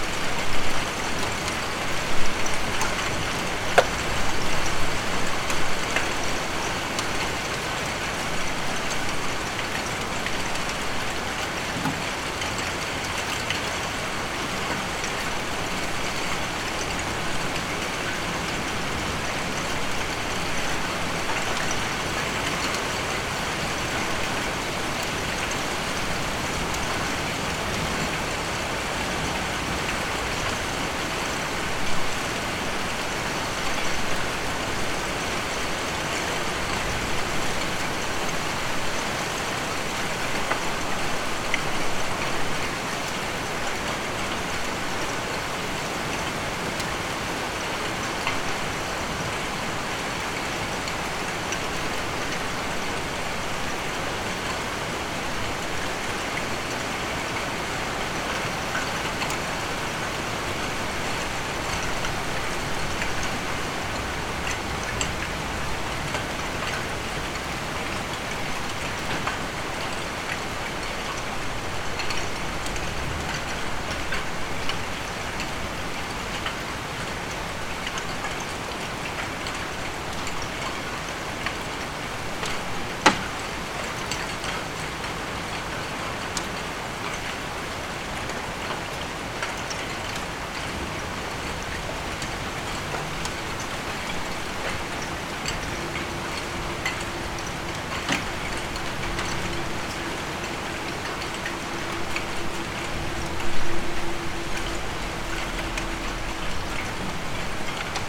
Dekerta, Kraków, Poland - (812 XY) Heavy rain with hailstone
Recording of heavy rain with hailstone.
Recorded with Rode NT4 on Sound Devices MixPre3-II.